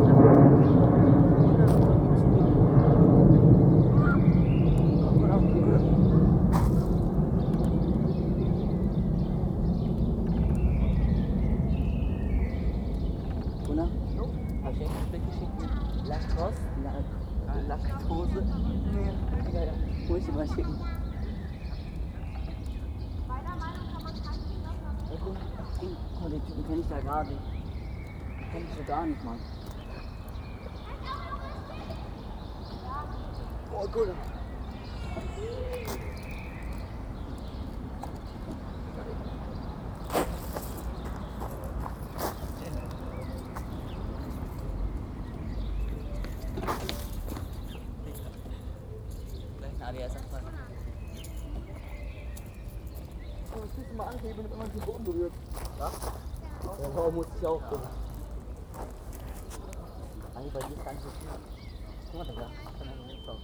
Pestalozzistraße, Berlin, Germany - Quiet green square, reverberant atmosphere
The square with trees, green and a playground is enclosed by high residential building giving a really pleasant all round reverberation to the sounds of children, birds and a loud passing plane.